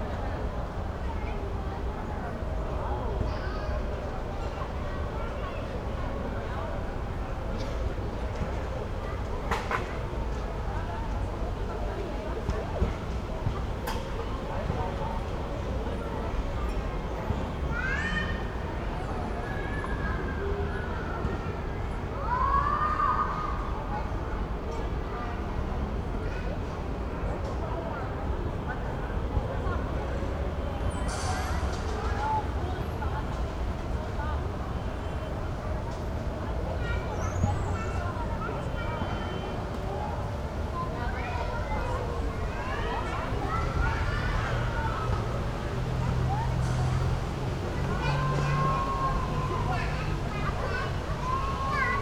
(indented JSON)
{
  "title": "berlin, weinbergspark, playground",
  "date": "2011-07-18 15:40:00",
  "description": "playground ambience, berlin, weinbergspark",
  "latitude": "52.53",
  "longitude": "13.40",
  "altitude": "54",
  "timezone": "Europe/Berlin"
}